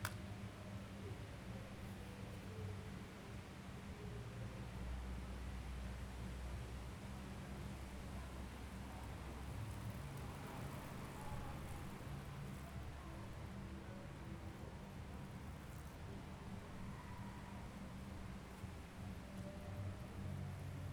Colchester, Essex, UK - Firstsite 11pm - July 18 2014
Late night recording before a storm, wind blowing leaves around in front of Firstsite Art Gallery, Colchester.
18 July 2014, 23:00